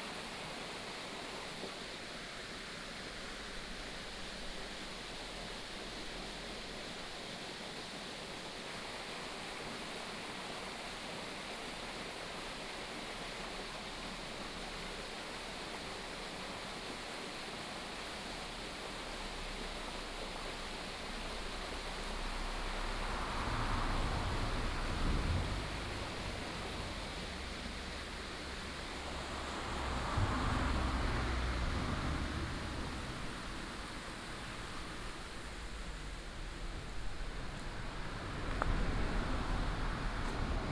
沙河溪, Gongguan Township - stream
stream, traffic sound, Binaural recordings, Sony PCM D100+ Soundman OKM II
24 September, ~17:00